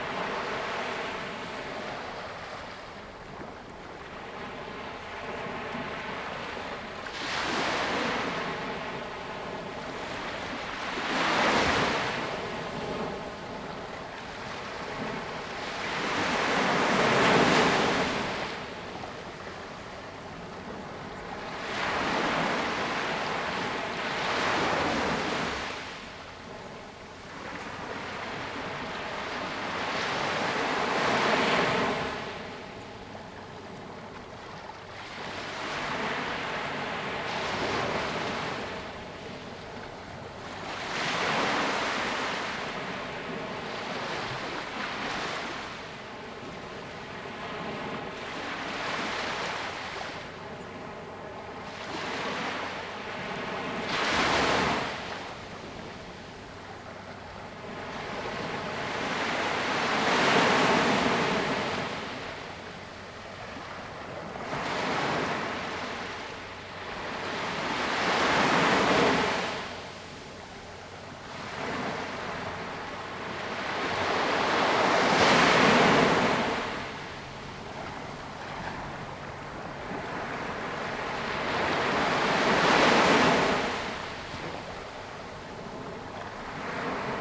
六塊厝, Tamsui Dist., New Taipei City - the waves
Aircraft flying through, Sound of the waves
Zoom H2n MS+XY